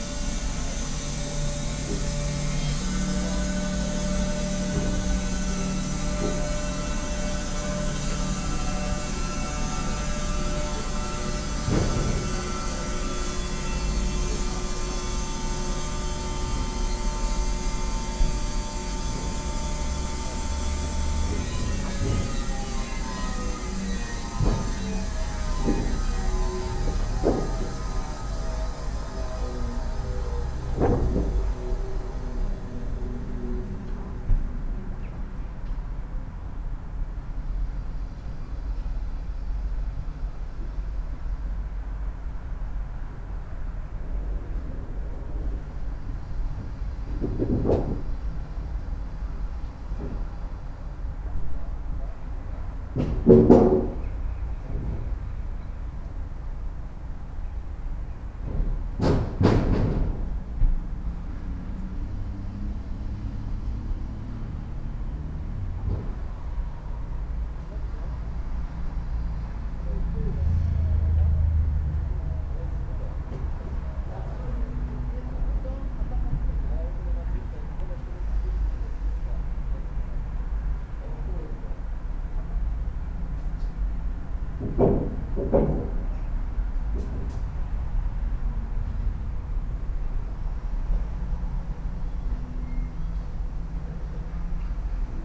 Mala Lazienkowa Street, Srem - wood cutting
at the gate of a wood processing plant. saw machinery sounds and wood rumble coming from a big shed. i was lucky to catch the sound of the machinery starting and winding down. (roland r-07 internal mics)
Śrem, Poland, 1 September 2018, 10:07